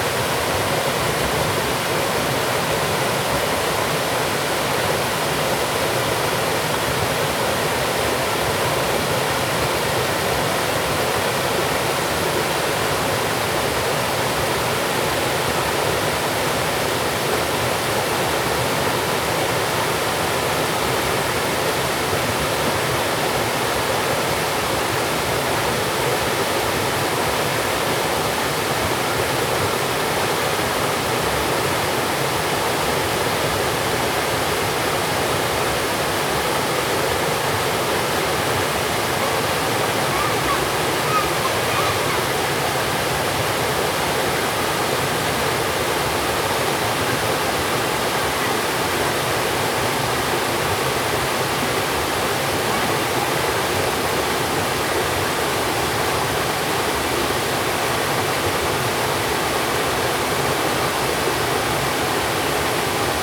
Waterfalls and rivers
Zoom H2n MS+ XY